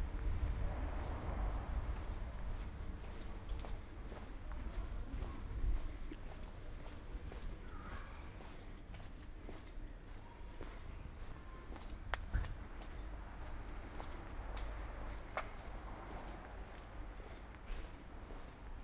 Ascolto il tuo cuore, città. I listen to your heart, city. Chapter IX - Round Noon bells and Valentino Park in the time of COVID1 Soundwalk
Sunday March 14th 2020. San Salvario district Turin, to Valentino park and back, five days after emergency disposition due to the epidemic of COVID19.
Start at 11:49 p.m. end at 12:49 p.m. duration of recording 59'30''
The entire path is associated with a synchronized GPS track recorded in the (kmz, kml, gpx) files downloadable here:
Piemonte, Italia, 15 March 2020